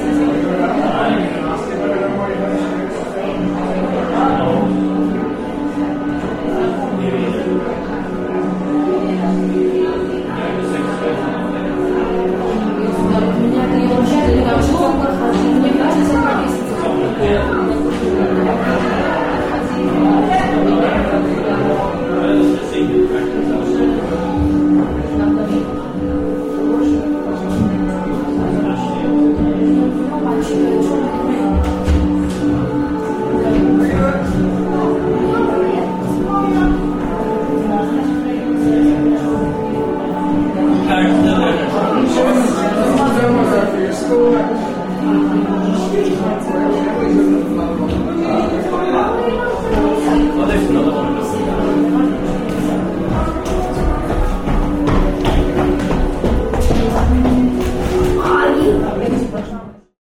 The Dock Arts Centre, Carrick on Shannon, Co. Leitrim, Ireland - The Sunken Hum Broadcast 347 - Exhibition Opening - Willie's Film Playing - 13 December 2013
The sound of "The Sun Sets At A Foot's Pace" showing at the exhibition opening with people walking around and talking in the background.
13 December 2013, 20:00